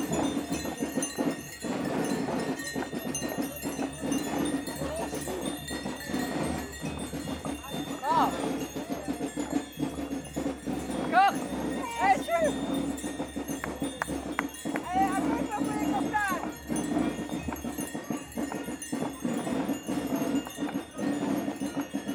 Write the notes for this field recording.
This long recording is about the Gilles de Binche. It's a traditional carnaval played in some parts of Belgium. People wear very special costumes, Wikipedia describes : made with a linen suit with red, yellow, and black heraldic designs. It's trimmed with large white-lace cuffs and collars. The suit is stuffed with straw, giving the Gille a hunched back. These Gilles are playing music and dancing in the streets, throwing oranges on everybody, on cars, in the houses if windows are open. It's very noisy and festive. Some of the Gilles wear enormous, white, feathered hats. Above all, the Gilles de Binche are EXTREMELY DRUNK ! It's terrible and that's why the fanfare is quite inaudible ! But all this takes part of our heritage. At the end of the day, they can't play anything, they yell in the streets and they piss on the autobus !! These Gilles de Binche come from La Louvière and they are the Gilles de Bouvy troop.